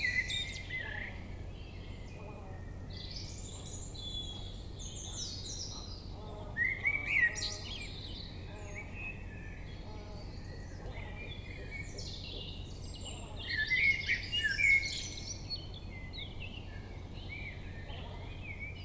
Atlantic Pond, Ballintemple, Cork, Ireland - Dawn Chorus w/ Prominent Blackbird
Recorder placed on a tiny tripod in the grass facing east. Cloudy with very little wind, before dawn. I attempted to catch the reverb from the clearing in the trees. I like how prominent the blackbird ended up to the right of the stereo.
Munster, Ireland, 3 May